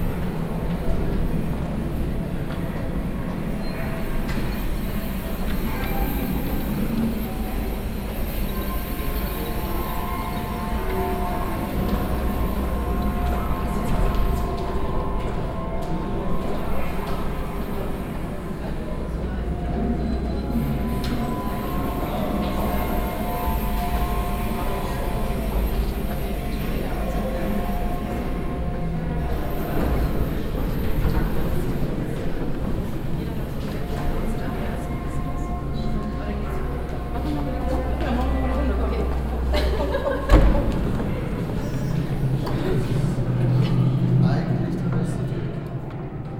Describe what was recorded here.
temporäre klanginstallation zu plan08 von johannes s. sistermanns in den paternostern der volkshochschule köln, soundmap nrw: social ambiences, art places and topographic field recordings